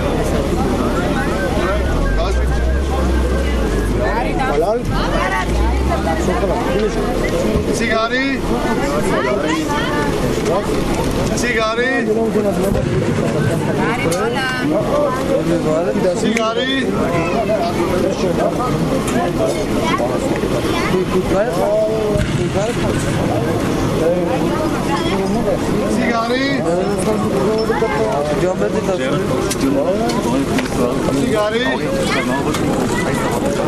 Sofia, Bitaka Flea Market - Bitaka III
Sofia, Bulgaria, October 2012